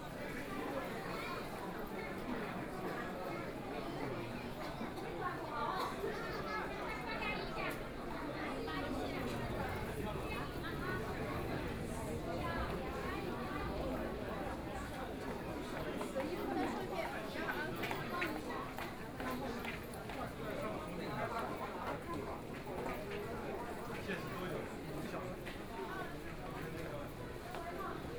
Zhabei District, Shanghai - soundwalk
From the subway underground passage into, After many underground shopping street, Enter the subway station, The crowd, Binaural recording, Zoom H6+ Soundman OKM II